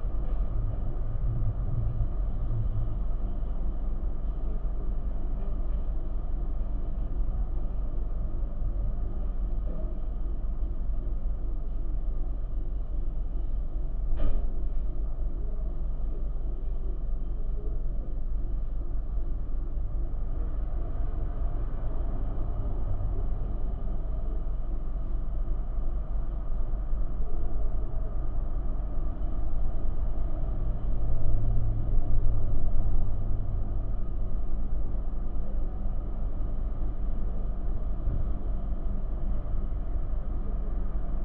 Vidukle, Lithuania. contact mic on window
contact microphones on motel window
18 May, 17:40